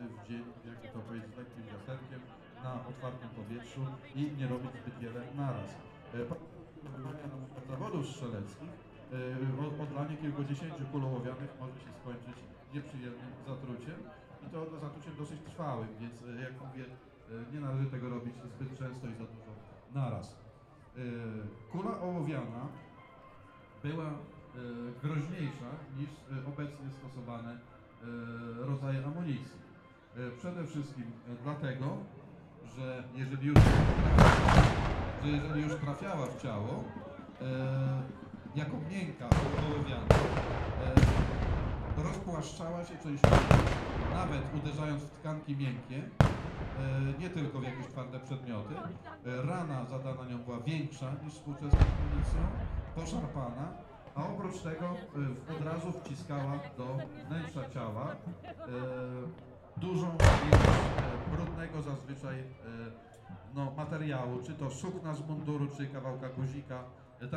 The biggest battle of Napoleon's east campaigne which took place in Warmia region (former East Preussia).